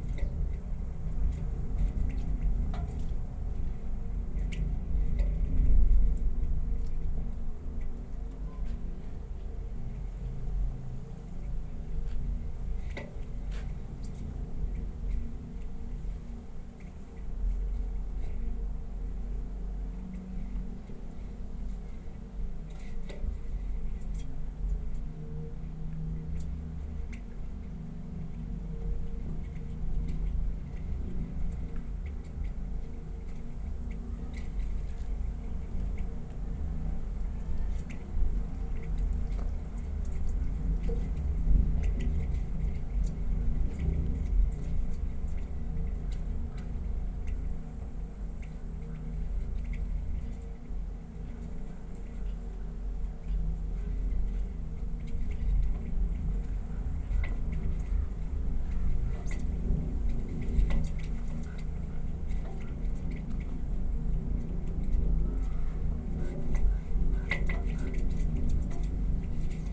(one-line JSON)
{"title": "Utena, Lithuania, inside the flag pole", "date": "2022-07-28 16:35:00", "description": "Bicycling with my son. Stopped for coffe at local stadium. I saw three flagpoles nearby, went to checl for sound:) A pair of little holes in the pole - just right place to put my micro Uši mics...", "latitude": "55.52", "longitude": "25.61", "altitude": "107", "timezone": "Europe/Vilnius"}